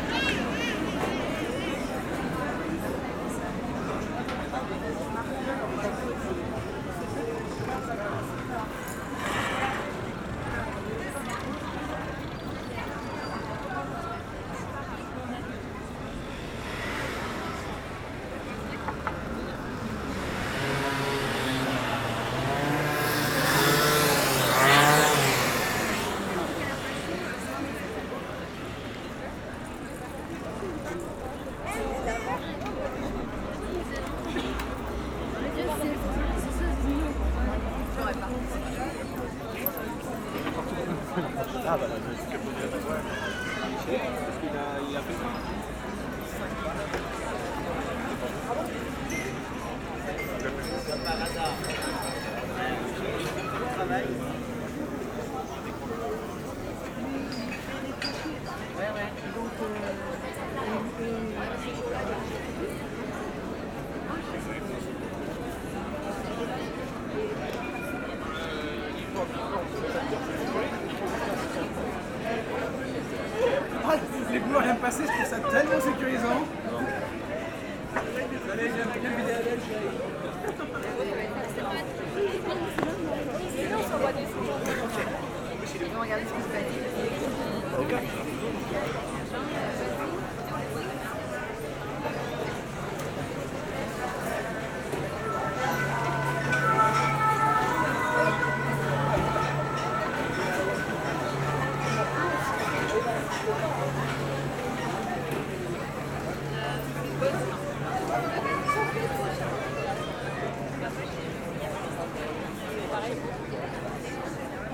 A public holiday evening, a lot of people are in the bars, drinking and enjoy the sun.